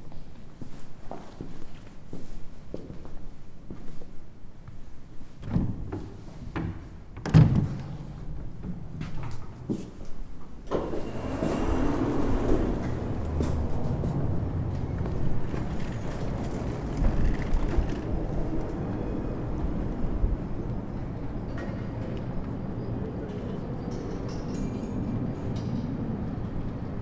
De Krijtberg Singel, Binnenstad, Amsterdam, Nizozemsko - De Krijtberg
De Krijtberg of Sint-Franciscus Xaveriuskerk is een rooms-katholieke rectoraatskerk in het centrum van Amsterdam, gewijd aan de heilige Franciscus Xaverius. De kerk staat aan het Singel en maakt deel uit van binnenstadsparochie van de Heilige Nicolaas. Hij staat in de volksmond ook wel bekend als De Rijtjeskerk.
Amsterdam, Netherlands, 27 February